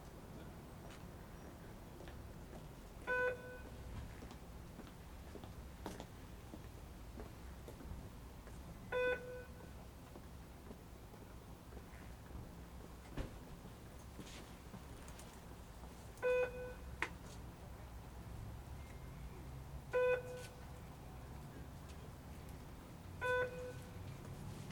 Periodic beeps from overhead speakers along the Edmonds train platform warn commuters of the impending arrival of the southbound Everett-Mukilteo-Edmonds-Seattle passenger train, called the "Sounder." People can be heard queuing up to board the four cars, headed to exciting jobs downtown. The train pulls in, loads, and continues on its way.